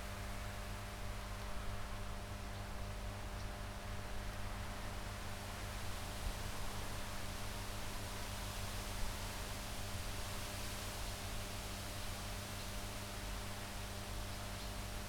Srem, near hospital - transformer chamber among bushes
recorder near a transformer chamber. i like the blend between the straight forward, symmetric buzz of the transformer and the eclectic, unidirectional chirp of nature and hissing wind
Gmina Śrem, Poland, 12 August, 10:21